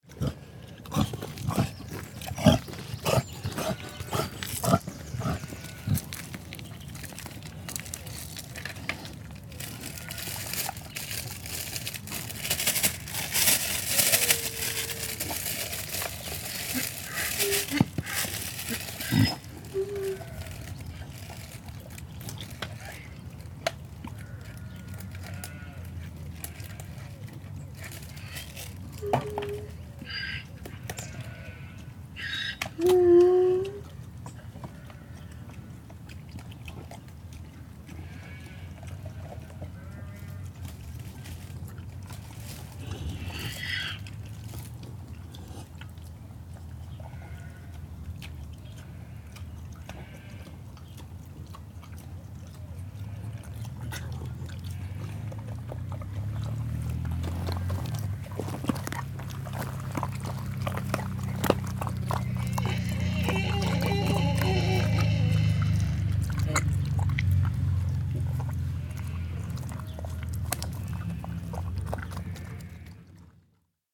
Rushall Farm, Scratchface Lane, Bradfield, UK - Pig and donkeys
This is the sound of the pig at Rushall Farm getting some leftovers. You can hear her noisily eating and the sound in the background is the braying of a pair of donkeys in a nearby field. There are alpacas here as well, but they don't make much sound.
Reading, UK